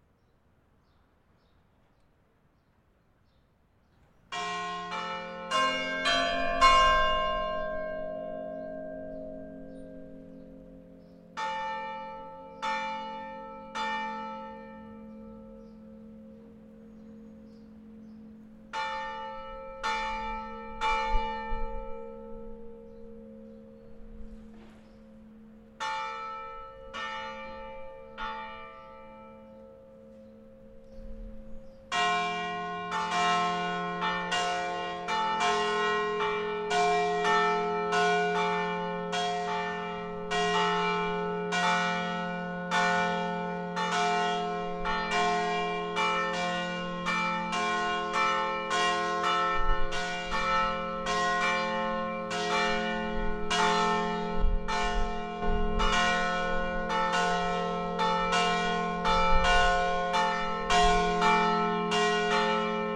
rue de Gascogne, Monferran-Savès, France - Lockdown 1 km - noon - angelus rings (church)
Recorded during first lockdown, near church
Zoom H6 capsule xy
Nice weather